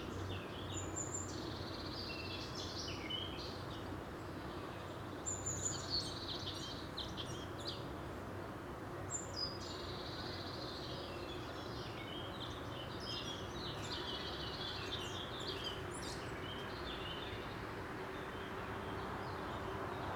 tapada das necessidades, Lisboa, Portugal, Garden
Necessidades Garden, kids, church bells, background traffic (Tagus river bridge)